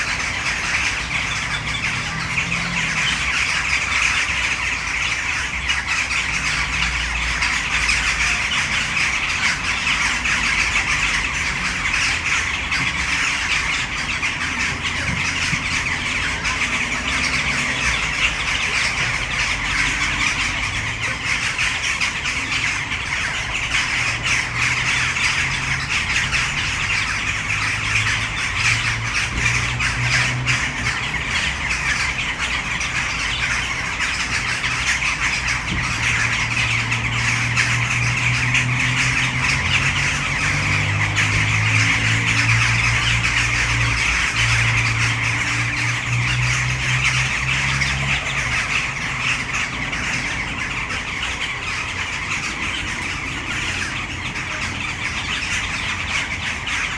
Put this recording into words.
A tree full of jackdaws in the city center of Brno.